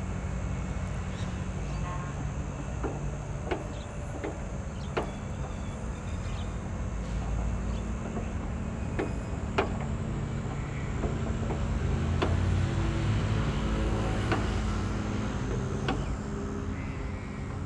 Huanhe W. Rd., Banqiao Dist., New Taipei City - Construction Sound
Riverside Park, Construction Sound
Zoom H4n +Rode NT4
New Taipei City, Taiwan, 19 January 2012